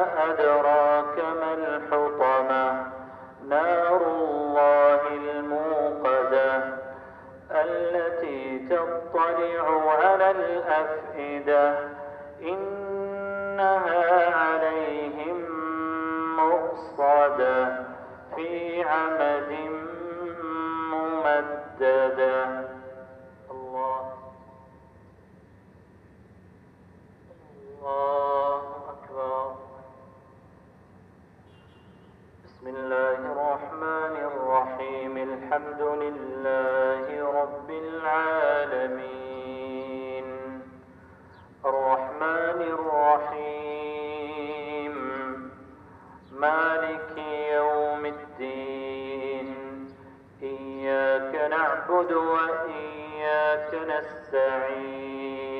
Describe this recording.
Appel à la prière de 18h21 - Mosquée Asker South - Askar - Bahrain